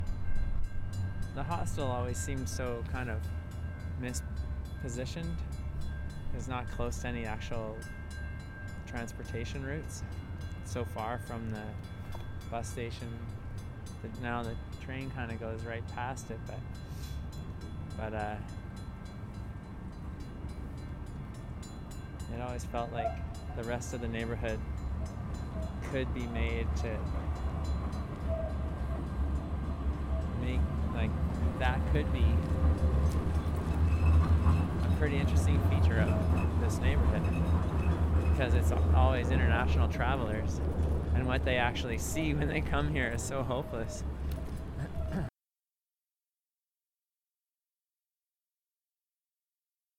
East Village, Calgary, AB, Canada - Hostel
This is my Village
Tomas Jonsson
8 April